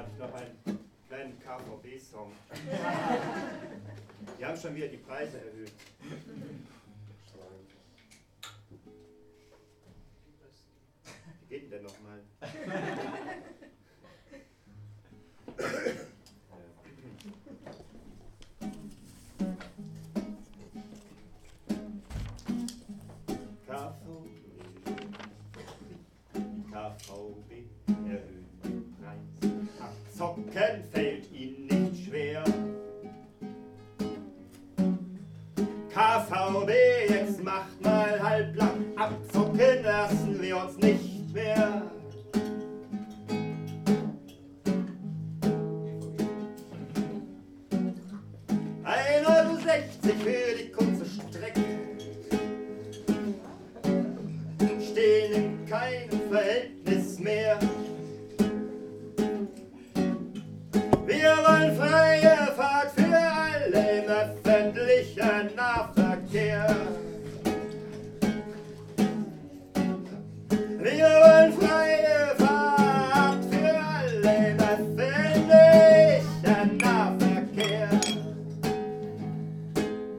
koeln, cafe storch - poor poetry
13.01.2009 0:20 i went here late at night starving, and it wasn't exactly exciting to listen to this performance. but this place serves foot until it closes, and it's way cool since it hasn't changed style in 100 years...